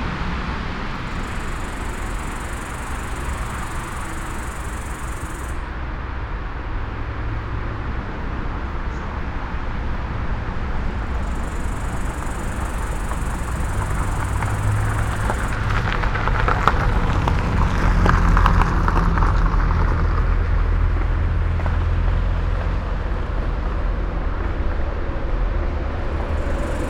under viadukt Vodole, Slovenia - cicada with traffic noise